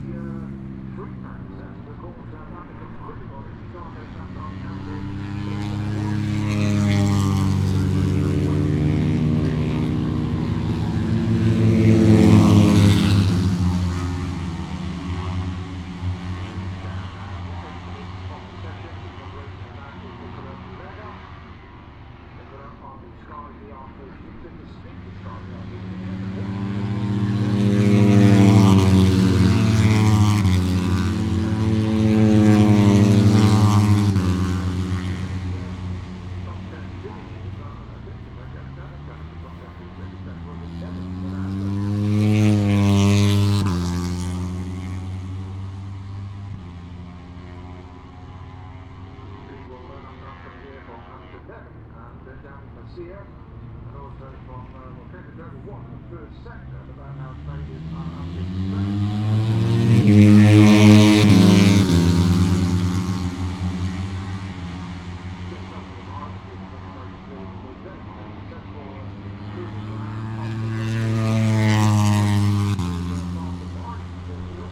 Silverstone Circuit, Towcester, UK - British Motorcycle Grand Prix 2018... moto one ...
British Motorcycle Grand Prix 2018 ... moto one ... free practice one ... maggotts ... lavalier mics clipped to sandwich box ...
24 August